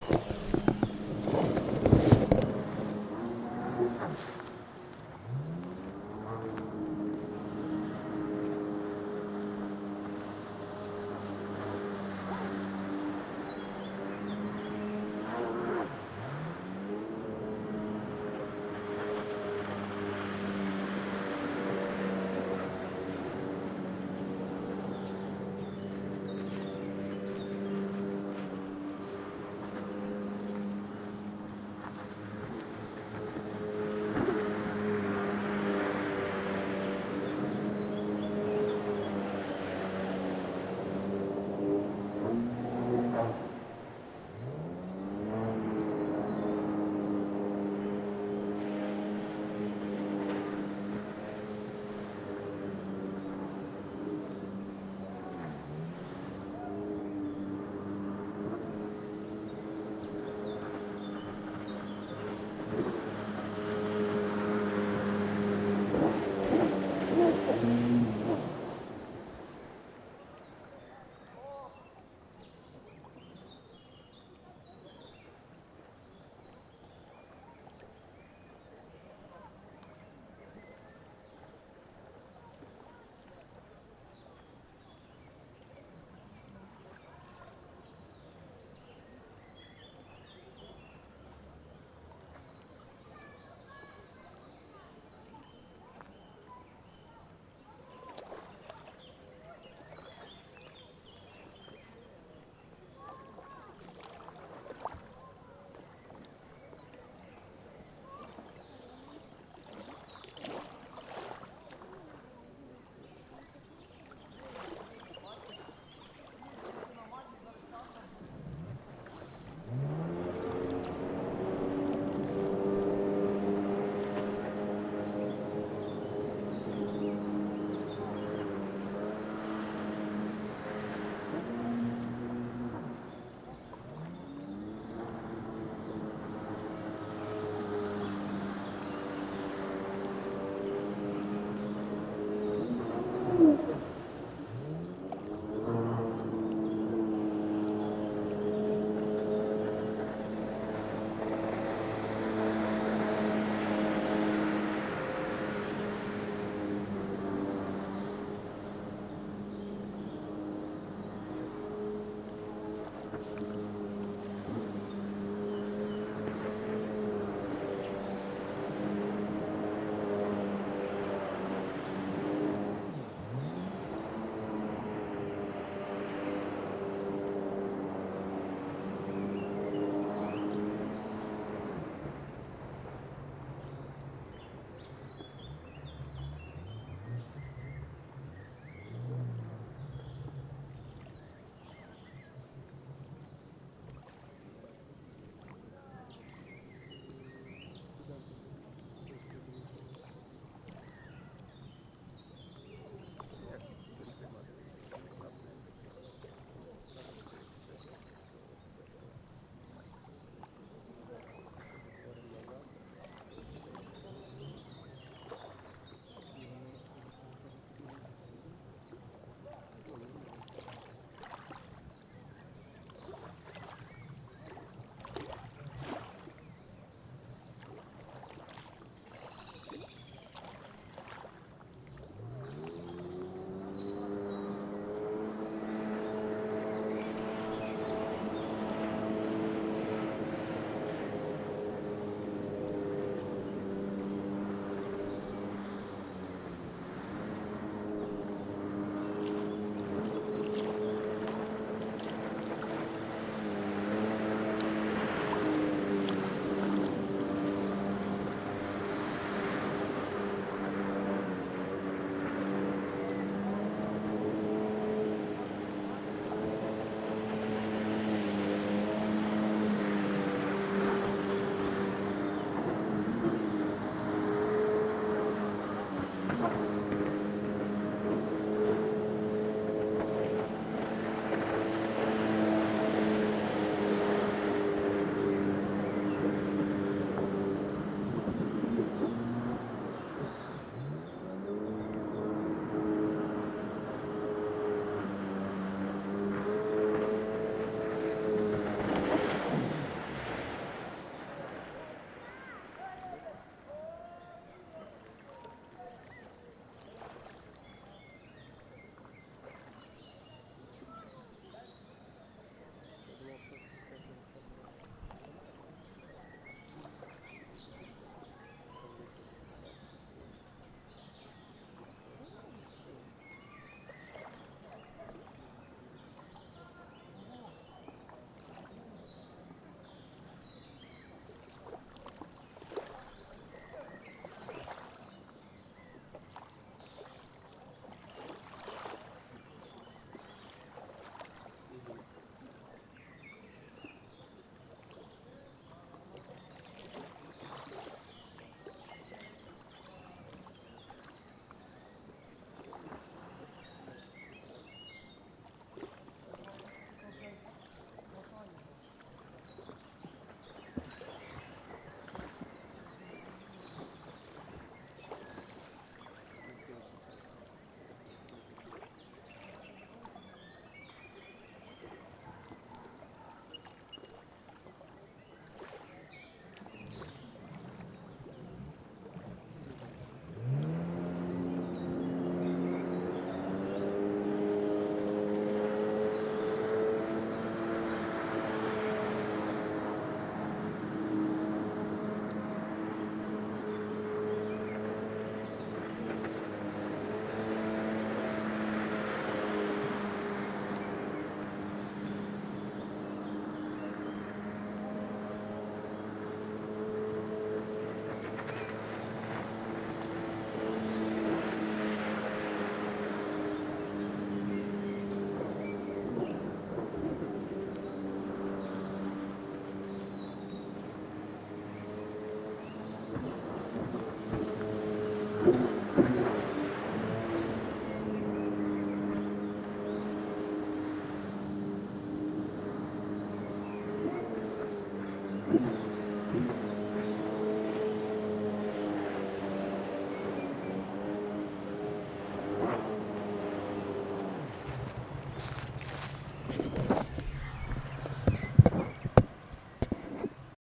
Ukraine / Vinnytsia / project Alley 12,7 / sound #18 / water scooter
27 June 2020, 15:25